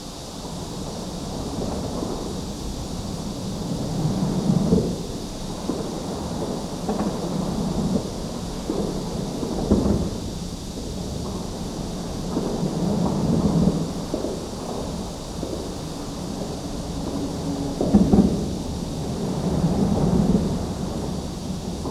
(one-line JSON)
{"title": "Zhongli Dist., Taoyuan City - traffic sound", "date": "2017-07-28 07:03:00", "description": "Cicada cry, traffic sound, Under the highway, Zoom H2n MS+ XY", "latitude": "24.97", "longitude": "121.22", "altitude": "121", "timezone": "Asia/Taipei"}